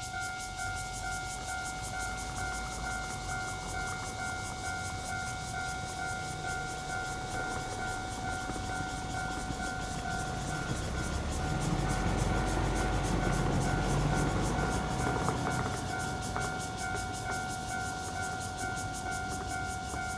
Xipu Rd., Guanshan Township - In the railway level crossing
In the railway level crossing, Cicadas sound, Traffic Sound, Train traveling through, Very hot weather
Zoom H2n MS+ XY